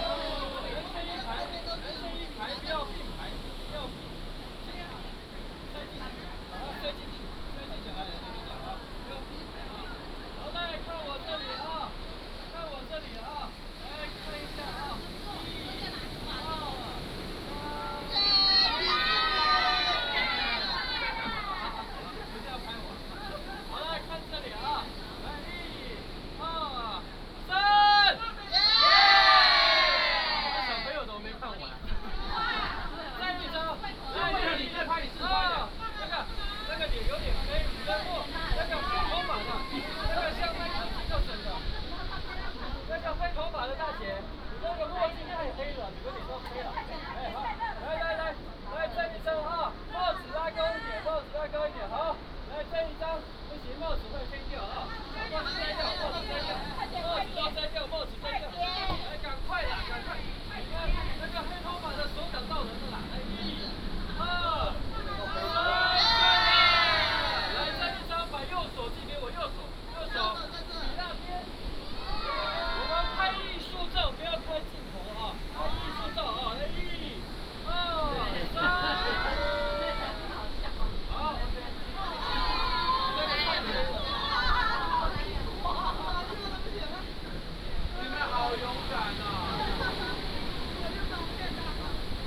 Shisan Rd., Chenggong Township - Tourists
Tourists from China, Sound of the waves, The weather is very hot
Taitung County, Taiwan, 8 September 2014, 10:34